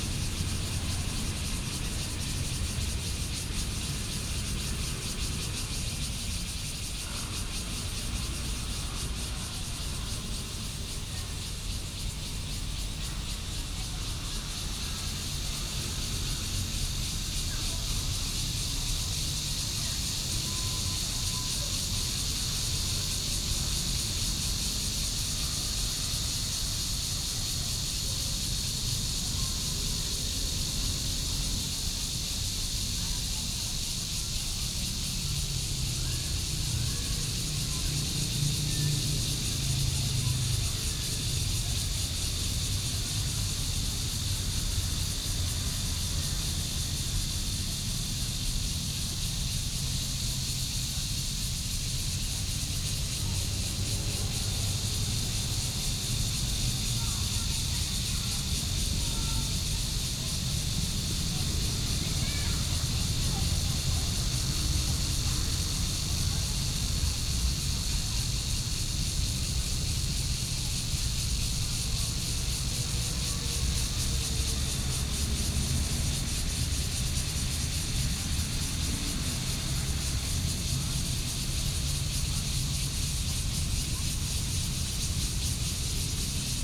北投區豐年公園, Taipei City - Cicadas sound
In the Park, Traffic Sound, Cicadas sound
Sony PCM D50+ Soundman OKM II